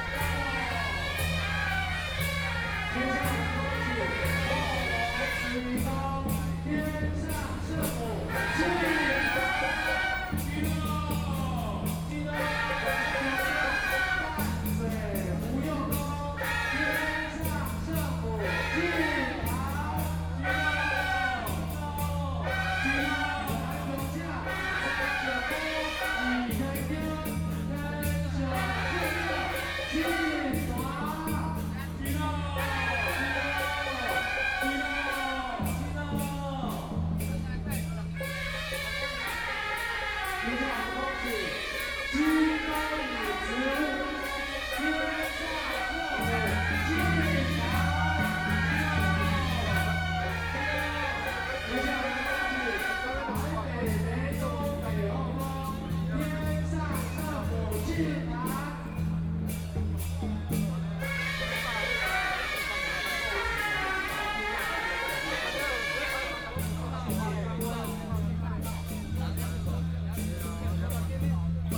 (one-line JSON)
{"title": "National Taiwan Museum, Taipei City - Traditional temple festivals", "date": "2013-11-16 12:15:00", "description": "Traditional temple festivals, Ceremony to greet the gods to enter the venue, Binaural recordings, Zoom H6+ Soundman OKM II", "latitude": "25.04", "longitude": "121.52", "altitude": "21", "timezone": "Asia/Taipei"}